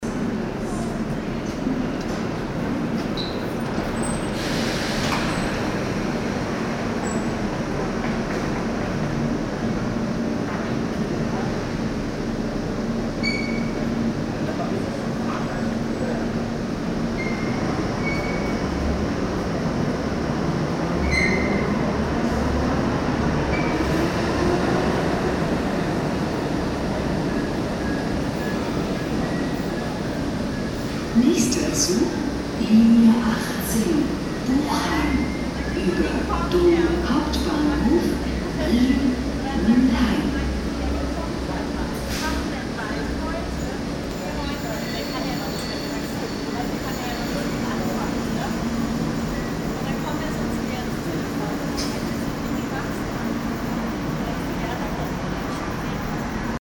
cologne, apellhofplatz, ubahn station - cologne apellhofplatz, ubahn station
u-bahnstation - nachmittags
soundmap nrw: social ambiences/ listen to the people - in & outdoor nearfield recordings